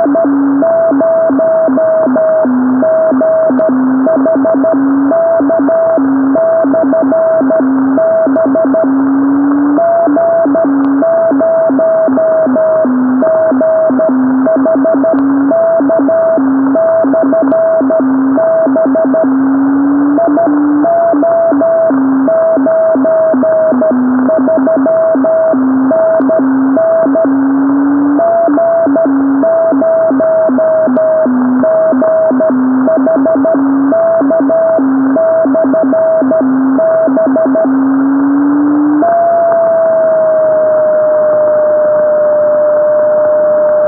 G0GHK beacon on 10368MHz recorded off air by Dave (G0DJA) in Bolsover, Derbyshire (IO93if)
North Lincolnshire, UK